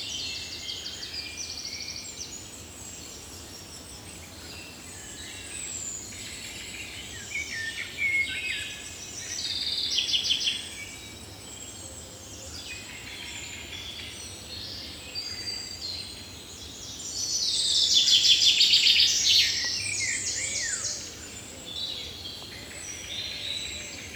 Thuin, Belgique - Birds in the forest
Belgian woods are not forests. It's an accumulation of trees. Inside run deep paths. You will find here shouting cyclists and noisy walkers. Above, it's an uninterrupted flight of take-off and landings. Downstairs is a tourist site: the Aulne abbey. An old vehicles parade makes a devil noise on the cobblestones. On the right is the village of Landelies. Sunday morning is a fine day today. A motorcycle concentration occupies the roads. At the top is Montigny-Le-Tilleul. Strident ambulances tear apart the soundscape. Belgium is that. It's nothing more than a gigantic pile of noise pollution, whatever the time whatever the day.
A moment, you have to mourn. The forest in Belgium no longer exists. These recordings made in the woods concentrate three hours of intense fighting, trying to convince oneself that something is still possible. Something is still possible ?
Common Chaffinch, lot of juvenile Great Tit, Blackbird.
3 June 2018, 08:15